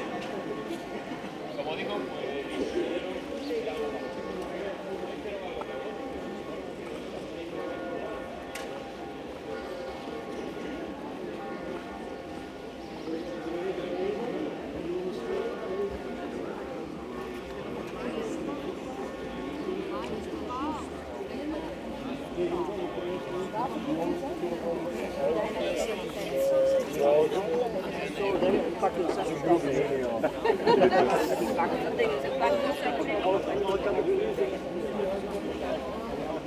Mechelen, Belgique - Old persons visiting Mechelen
On a quiet Sunday morning, a group of old persons is visiting Mechelen. They are walking in the old cobblestones streets, discussing and laughing about anything. Far away, the OLV-over-de-Dijlekerk bells are ringing.